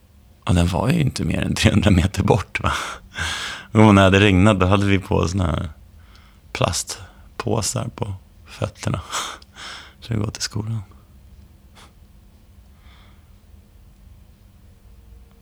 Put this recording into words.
Topology of Homecoming, Imagine walking down a street you grew, up on. Describe every detail you see along, the way. Just simply visualise it in your mind. At first your walks will last only a few minutes. Then after a week or more you will remember, more details and your walks will become longer. Five field recordings part of a new work and memory exercise by artist Stine Marie Jacobsen 2019. Stine Marie Jacobsen visited the Swedish city Tranås in spring 2019 and spoke to adult students from the local Swedish language school about their difficulties in learning to read and write for the first time through a foreign language. Their conversations lead her to invite the students to test an exercise which connects the limited short term memory with long term memory, which can store unlimited amounts of information. By creating a stronger path between short and long term memory, perhaps more and new knowledge will symbolically and dynamically merge with one’s childhood street and culture.